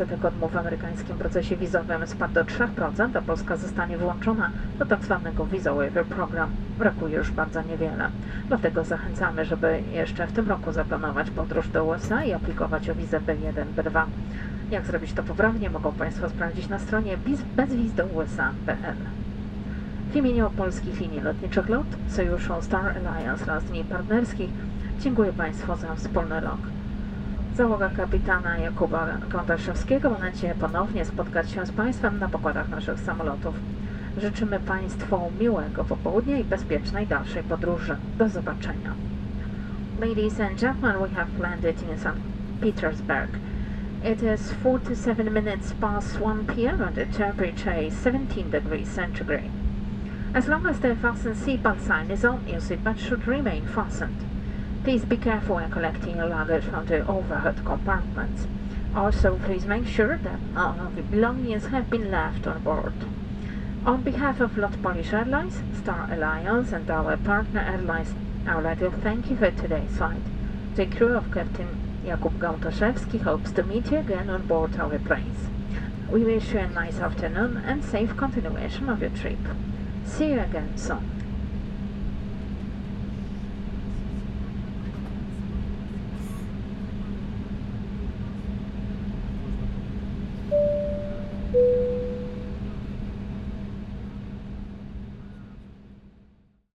Binaural recording of Embraer 170's landing in Sankt-Petersburg.
recorded with Soundman OKM + Sony D100
sound posted by Katarzyna Trzeciak
Северо-Западный федеральный округ, Россия, 4 September